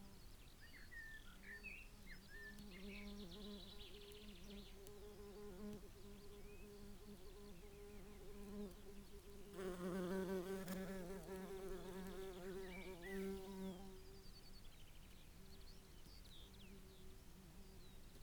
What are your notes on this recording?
grubbed out bees nest ... buff tipped bees nest ..? dug out by a badger ..? dpa 4060s in parabolic to MixPre3 ... parabolic on lip of nest ... bird calls ... song ... blackbird ... chaffinch ... skylark ... yellowhammer ... corn bunting ...